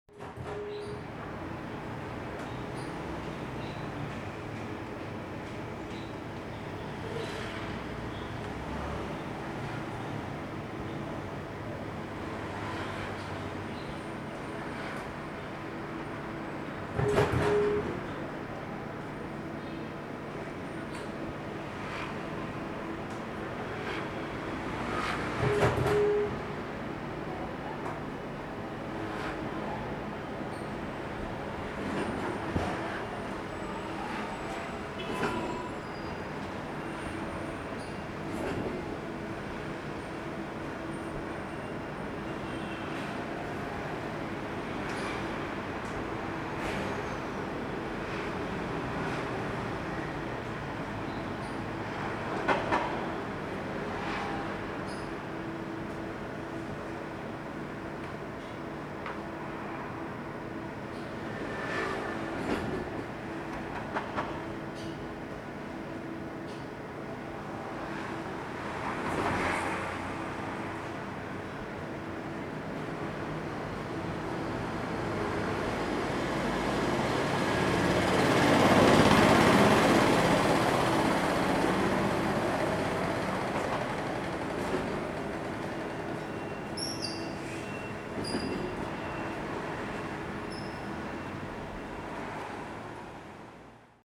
Small alley, Traffic Sound, There came the sound of small factories
Sony Hi-MD MZ-RH1 +Sony ECM-MS907